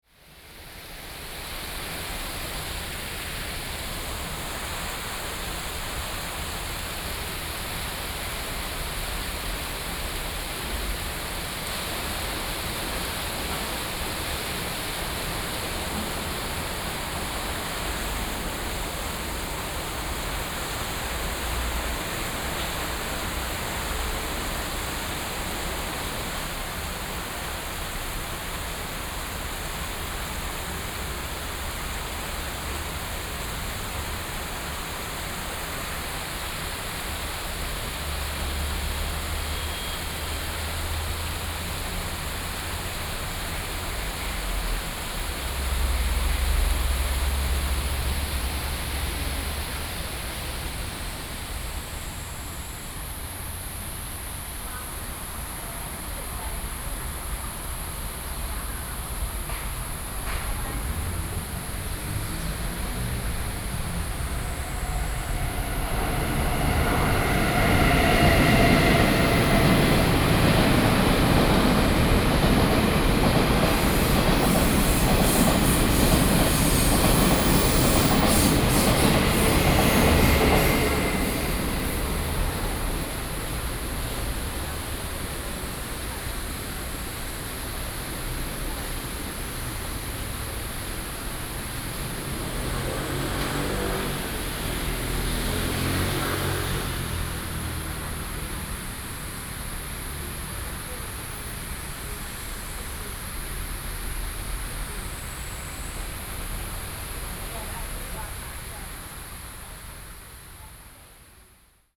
Zhonghe St., Shulin Dist., New Taipei City - Beside streams
Beside streams, Traffic Sound, Traveling by train
Sony PCM D50+ Soundman OKM II